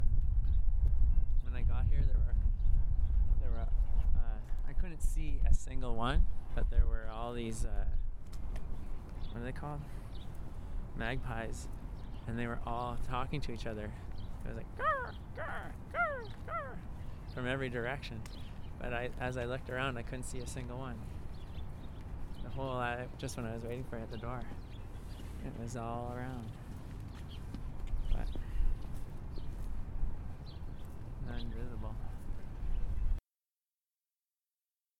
East Village, Calgary, AB, Canada - magpie
This is my Village
Tomas Jonsson
3 April, 16:40